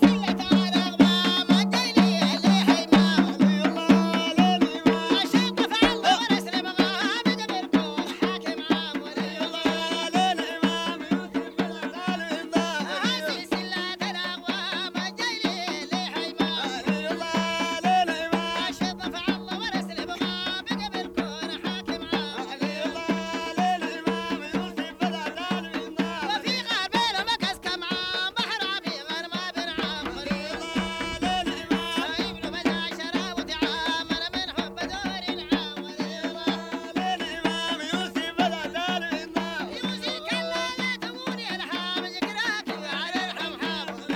sorry, i doubled the other song, here is a new one.